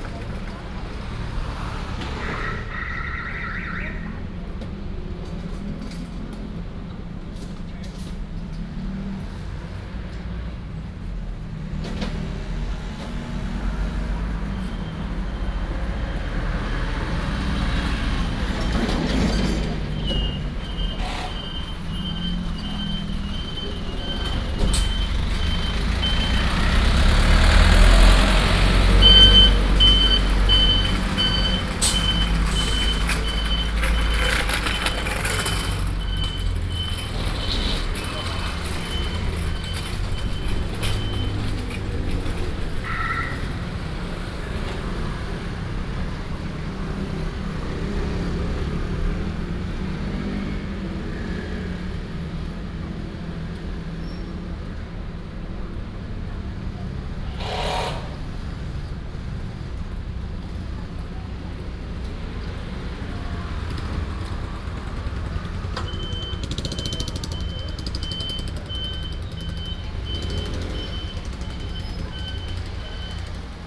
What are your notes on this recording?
Sitting on the corner street, Traffic Sound